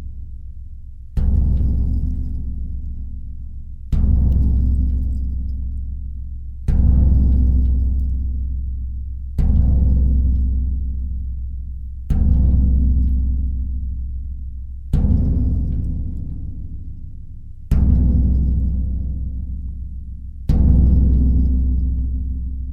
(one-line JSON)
{"title": "Moyeuvre-Grande, France - Doors", "date": "2016-11-12 15:05:00", "description": "Playing with 3 different metallic doors, in the underground mine. Doors are coupled because it's an access to 3 tunnels beginning from here.", "latitude": "49.25", "longitude": "6.05", "altitude": "215", "timezone": "Europe/Paris"}